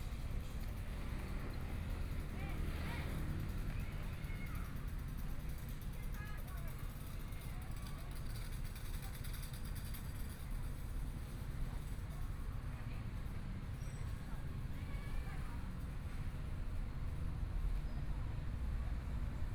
榮星公園, Taipei - Entrance to the park
Entrance to the park, Traffic Sound, the sound of the Kids playing game, Being compiled and ready to break the market, Binaural recordings, Zoom H4n+ Soundman OKM II